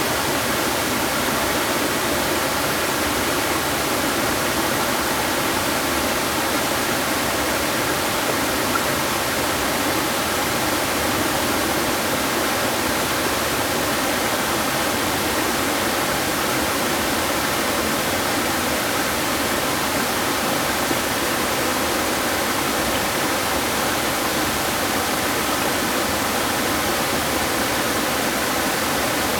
水上瀑布, 桃米里, Taiwan - waterfall
The sound of waterfall
Zoom H2n MS+XY +Spatial audio